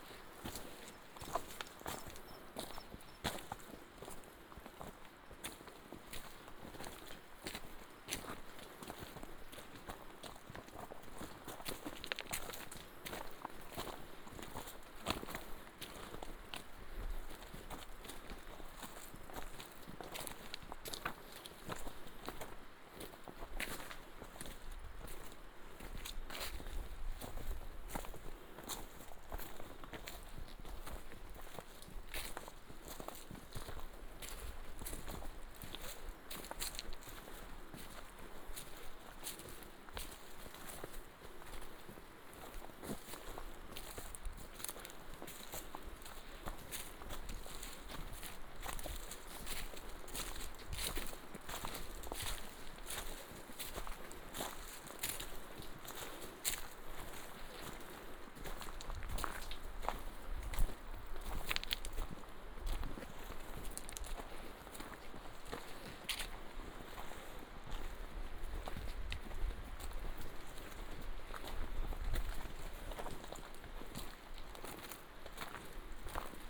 大竹溪, 達仁鄉台東縣 - Follow the Aboriginal Hunters
Stream sound, Follow the Aboriginal Hunters walking along the old trail, Footsteps, goat
Taitung County, Taiwan